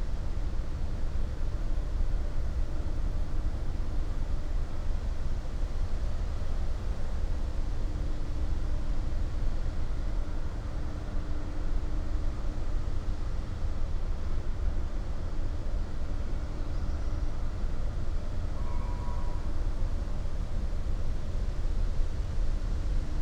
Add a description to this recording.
redundant power station hum, heard on the nearby cemetery. Chorzów power station is a thermal power plant located in Chorzów, Silesian Voivodeship, Poland. It has been in operation since 1898, since 2003 under the name Elcho. (Sony PCM D50, DPA4060)